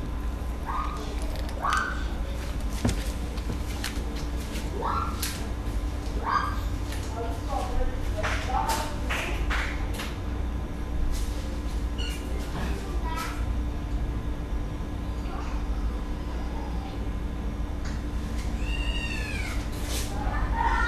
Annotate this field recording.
Gravação do ambiente doméstico com outros sons das casas vizinhas e pessoas na rua.